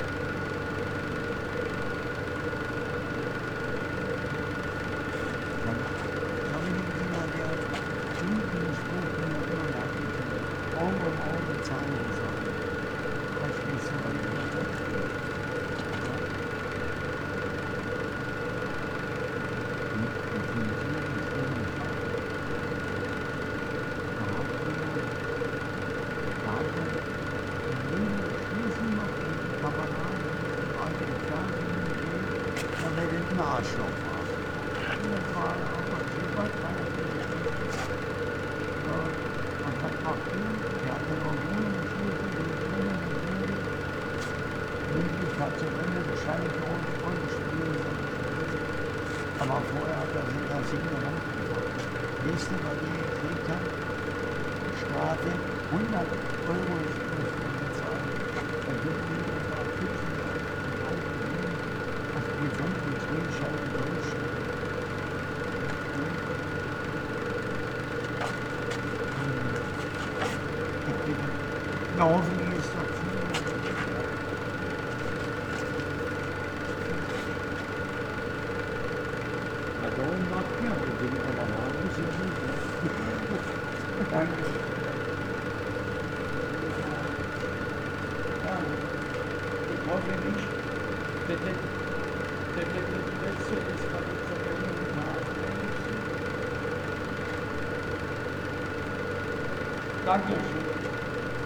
sewer works site early in the morning, water pump, a man comes around looking for his dog and bums a cigarette
the city, the country & me: february 6, 2014